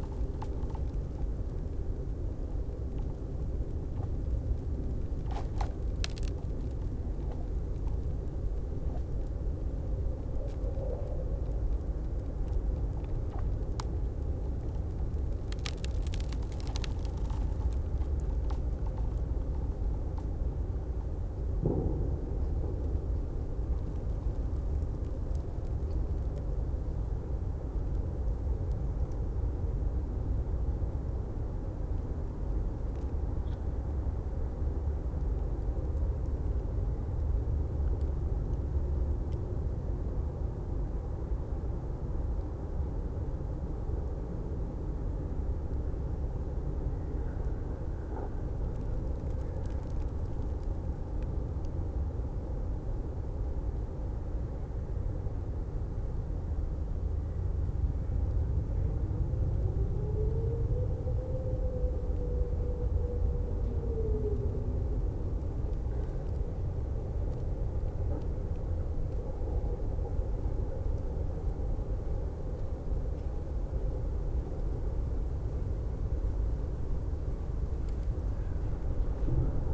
Spree, Plänterwald, Berlin - ice cracks, river side ambience
Plänterwald, Berlin, river Spree, cracking ice, cold winter Sunday afternoon
(Sony PCM D50, DPA4060)
26 January 2014, ~16:00